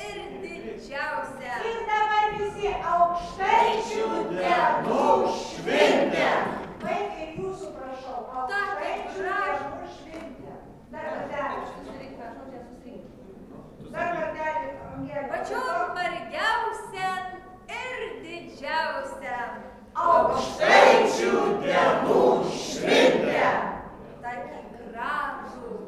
Lithuania, Utena, rehearsal at local cultural centre
reheasal for some folklore festival
28 February, ~19:00